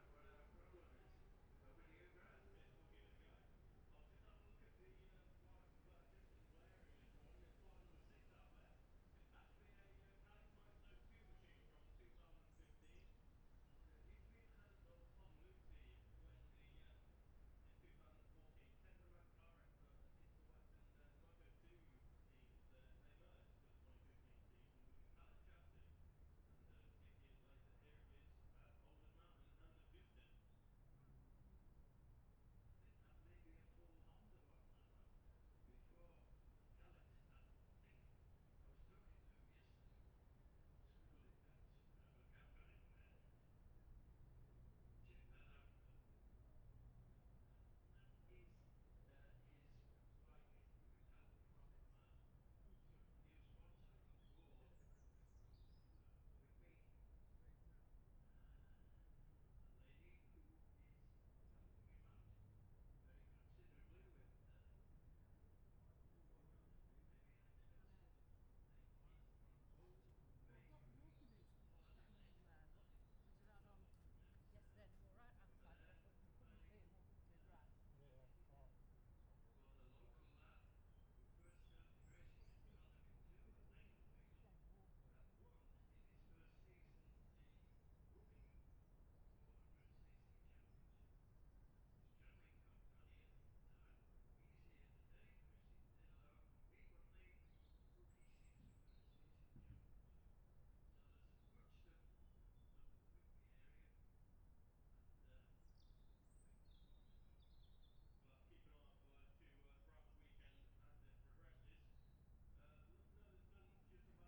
bob smith spring cup ... 600cc group A practice ... luhd pm-01mics to zoom h5 ...
Scarborough, UK, 22 May 2021, 09:35